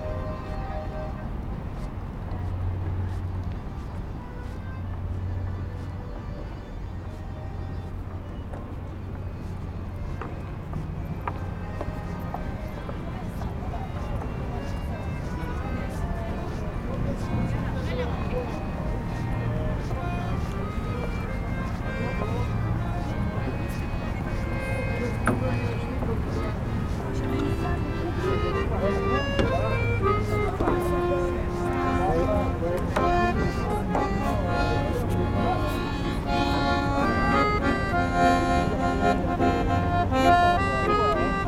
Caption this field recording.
Two persons are playing accordion on the Paris bridge called pont des Arts.